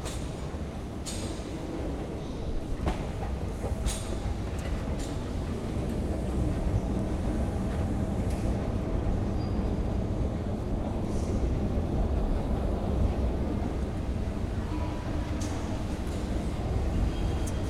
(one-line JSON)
{"title": "Baixa de Lisboa", "date": "2010-03-22 17:06:00", "description": "A soundscape piece made of field recordings in the area, encompassing the subway station, the ferry boat harbour, the street market, the cafes...It goes from downtown Lisbon to Principe Real", "latitude": "38.71", "longitude": "-9.14", "altitude": "10", "timezone": "Europe/London"}